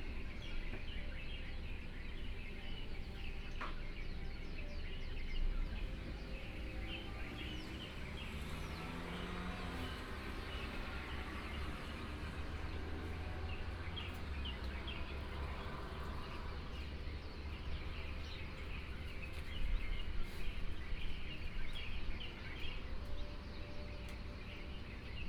後壁湖門市, Hengchun Township - Morning at the convenience store
Morning at the convenience store, traffic sound, Bird cry, Dog barking